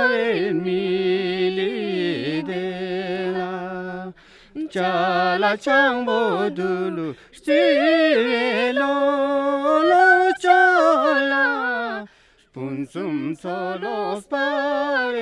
5H7J+6C Leh - Leh - Ladak - Inde
Leh - Ladak - Inde
dans la cour d'une Guest House, un duo de musique folklorique.
Fostex FR2 + AudioTechnica AT825
12 May 2008, 18:00